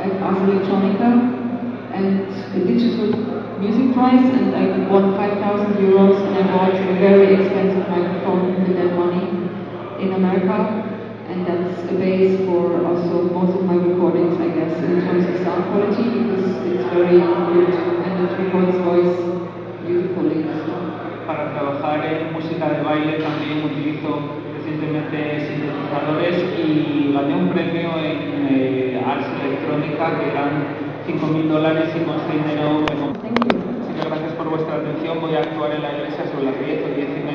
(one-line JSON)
{"title": "Gijon. LEV09", "description": "The poemproducer AGF (ANTYE GREIE) talking about her work in the cafeteria of the Laboral university.\n2009/5/2. 21:12h.", "latitude": "43.52", "longitude": "-5.62", "altitude": "43", "timezone": "Europe/Berlin"}